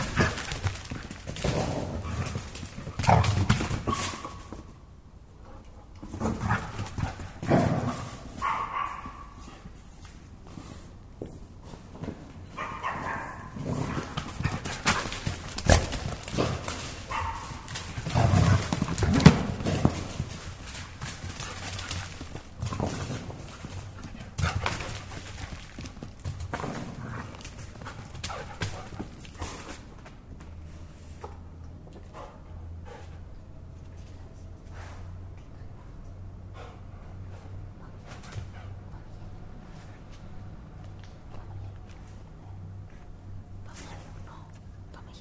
Athens, Greece-Velvendou - Afrodite looking for cats in the middleof the night...!!
Recorded with a Roland R-05.
Without an external micro.
Athina, Greece, 22 January